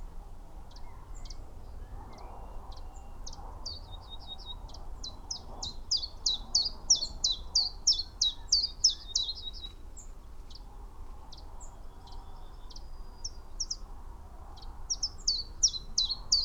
chiffchaff nest ... xlr sass on tripod to zoom h5 ... male song ... call in tree almost above nest ... female calling as visits nest ... fledgling calling from nest ... 12:40 fledgling(s) leave nest ... song calls from ... dunnock ... yellowhammer ... wren ... blackbird ... pied wagtail ... pheasant ... whitethroat ... crow ... blue tit ... background noise ...